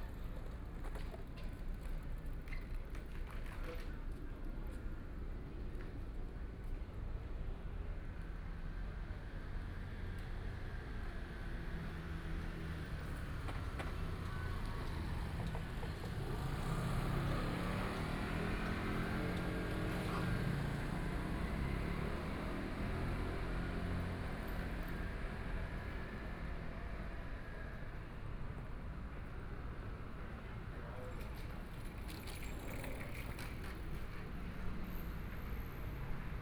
{
  "title": "中山區集英里, Taipei City - Night at the intersection",
  "date": "2014-04-15 20:55:00",
  "description": ".Night at the intersection, Sitting on the roadside, Traffic Sound\nPlease turn up the volume a little. Binaural recordings, Sony PCM D100+ Soundman OKM II",
  "latitude": "25.06",
  "longitude": "121.52",
  "altitude": "12",
  "timezone": "Asia/Taipei"
}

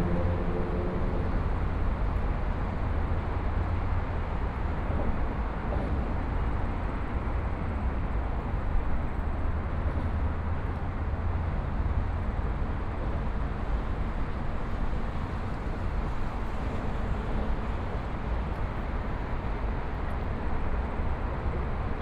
{
  "title": "中山區劍潭里, Taipei City - Traffic Sound",
  "date": "2014-03-15 19:26:00",
  "description": "Traffic Sound, Environmental Noise\nBinaural recordings",
  "latitude": "25.07",
  "longitude": "121.53",
  "timezone": "Asia/Taipei"
}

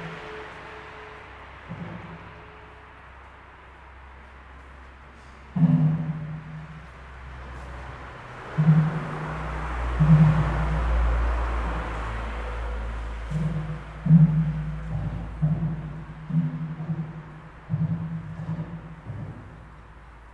{
  "title": "under a bridge in Kiel",
  "date": "2010-01-26 20:36:00",
  "description": "unusual harmonic knocking sound as cars pass the road above. thanks to Ramona for showing me this space.",
  "latitude": "54.34",
  "longitude": "10.12",
  "altitude": "23",
  "timezone": "Europe/Tallinn"
}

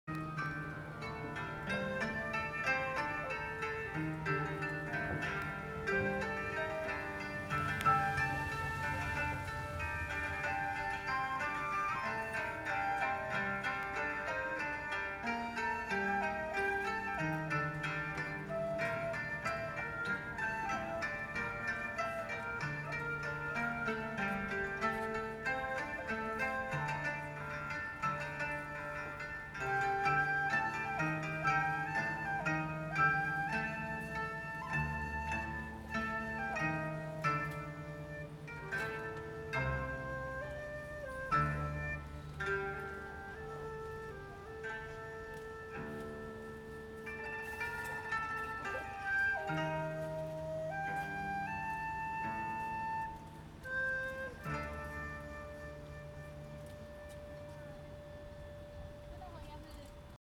{
  "title": "Ying restaurant 鶯料理 - Playing the Japenese Zither 日本箏琴演奏聲",
  "date": "2014-05-02 15:01:00",
  "description": "The broadcast playing the Japenese Zither perfroming audio in the restaurant.",
  "latitude": "22.99",
  "longitude": "120.20",
  "altitude": "25",
  "timezone": "Asia/Taipei"
}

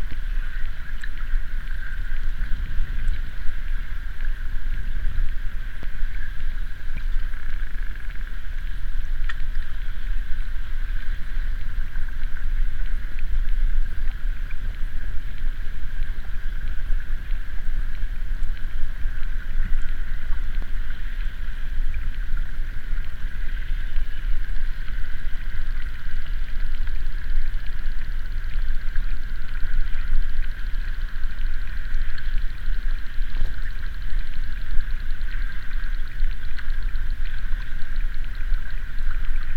{
  "title": "Šventupys, Lithuania, river underwater",
  "date": "2018-08-15 17:30:00",
  "description": "sense sounds of flowing river Sventoji. hydrophones.",
  "latitude": "55.62",
  "longitude": "25.43",
  "altitude": "86",
  "timezone": "GMT+1"
}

